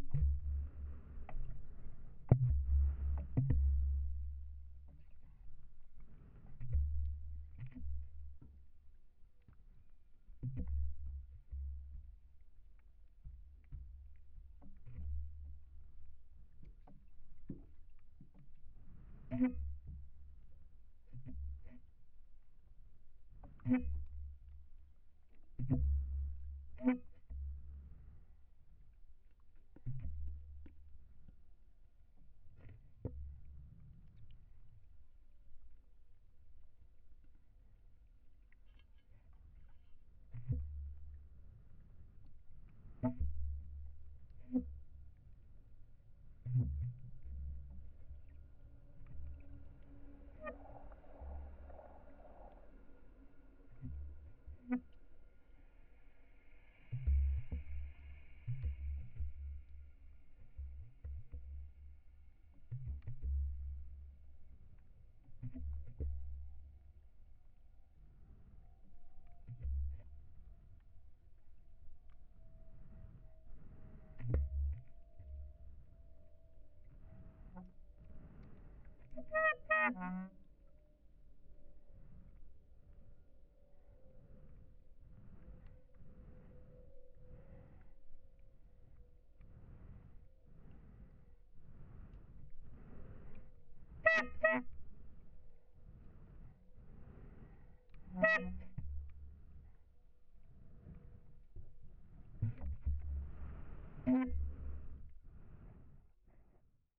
Mic/Recorder: Aquarian H2A / Fostex FR-2LE